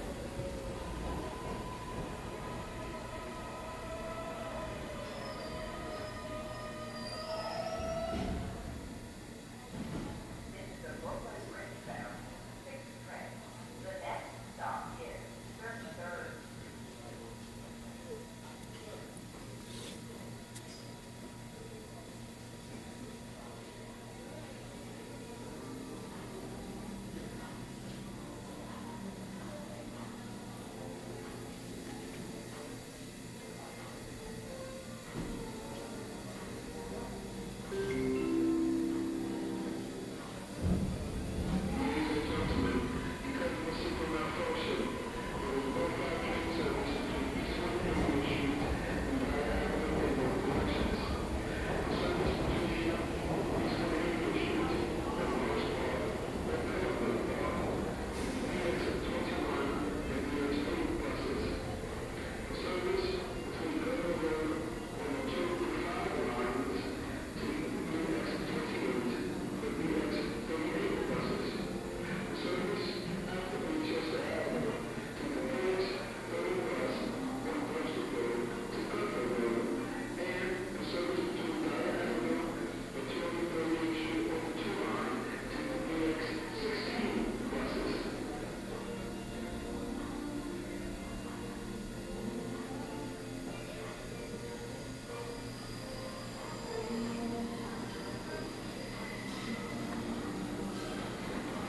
Midtown East, New York City, New York, USA - NYC, metro station
NYC, metro station at grand central station; platform, train coming n going, passengers waiting, music;
February 2014